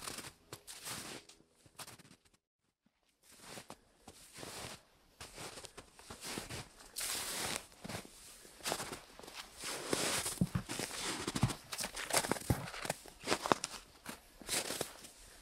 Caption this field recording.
In February 2021, when it was enough of snow (after many days of snowing), it was a nice sunny winter day and I made this recording in the smaller wood in between trees close to the Weser river. The atmosphere was very calm and not so many other sounds were present at the moment. I recorded the walking through this small wood in between trees. Zoom H3-VR Ambisonics Microphone